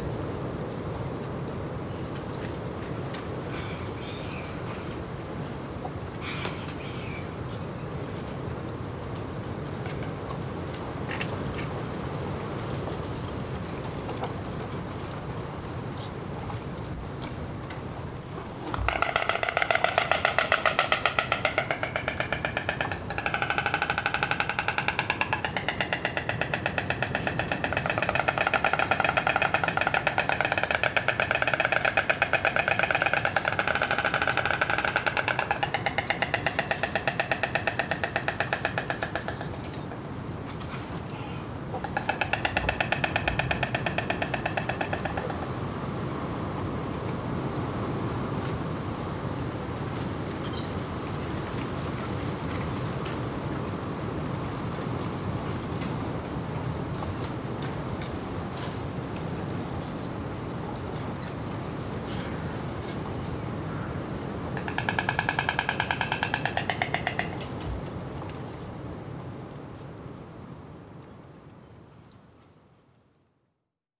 Rather difficult weather conditions and hard to approach damsels but above all a very good time to observe these peaceful storks in their nests on the remains of the Chateau de la Rivière.
Mono.
An old AKG C568EB.
TAscam DR100MK3.
Normandie, France métropolitaine, France